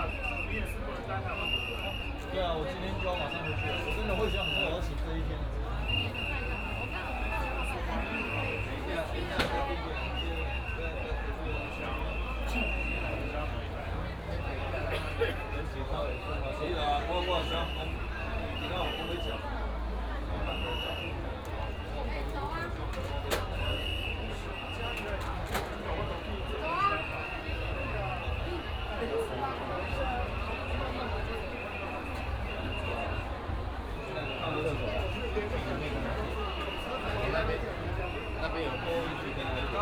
Baixi, Tongxiao Township - Walk in the alley
Walk in the alley, Matsu Pilgrimage Procession, Crowded crowd
9 March 2017, 13:27